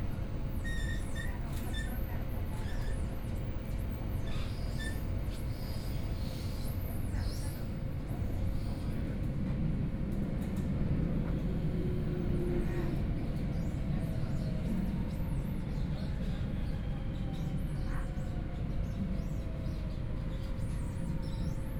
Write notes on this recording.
Line10 (Shanghai Metro), from Wujiaochang Station to North Sichuan Road station, Binaural recording, Zoom H6+ Soundman OKM II